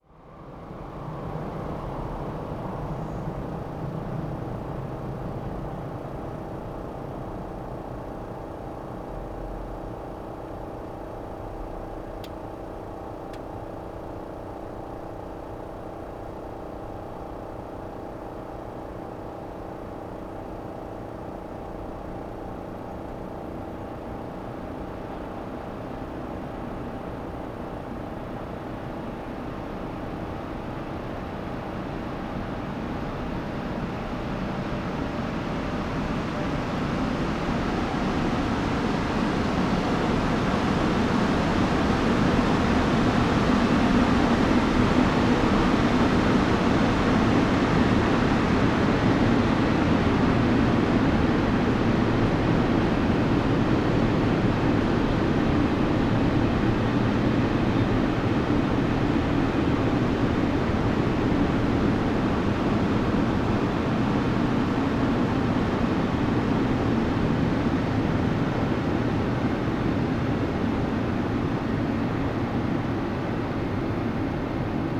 this is a dark and infrequently visited narrow corner, between houses and the railroad embankment. an aircon ventilator is slightly moving, another train passes.
Cologne, Germany, December 2012